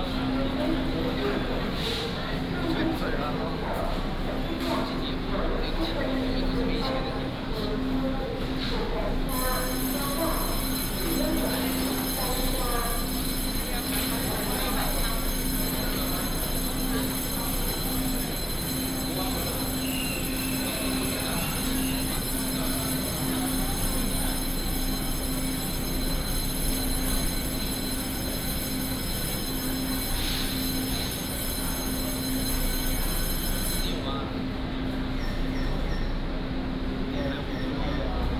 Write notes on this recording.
At the station platform, Station information broadcast, Station is very busy time